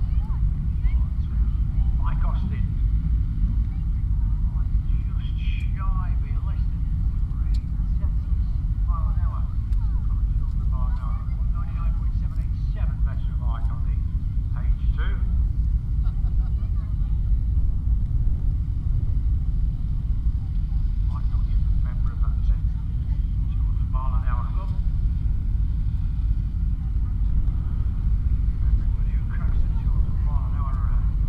Motorcycle Wheelie World Championship 2018 ... Elvington ... Standing start 1 mile ... open lavalier mics clipped to sandwich box ... very blustery conditions ... positioned just back of the timing line finish ... all sorts of background noise ...